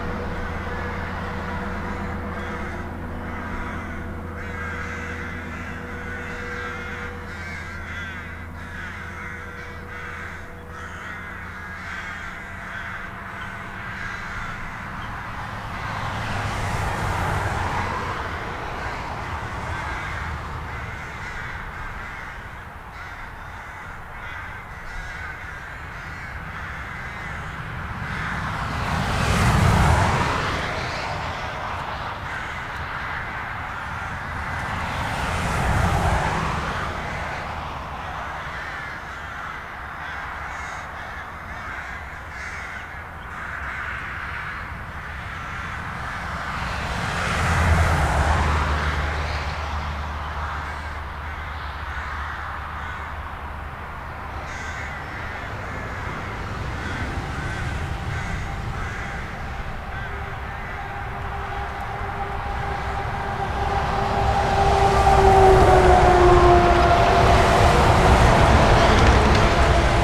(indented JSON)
{
  "title": "Beaumont, Aire de repos de Beaumont",
  "date": "2010-03-12 14:17:00",
  "description": "France, Auvergne, road traffic, crows, trucks",
  "latitude": "46.76",
  "longitude": "3.14",
  "timezone": "Europe/Berlin"
}